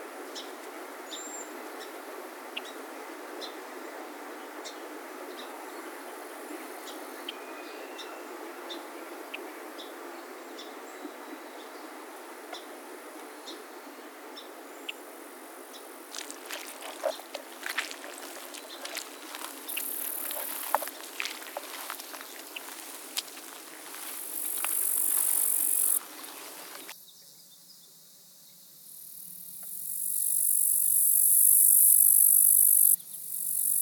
Réserve nationale de faune du Cap-Tourmente, Chemin du Cap Tourmente, Saint-Joachim, QC, Canada - Cap Tourmente
Orthoptères, oiseaux et amphibiens dans les marais à Scirpe au bord du Saint-Laurent, juillet 2013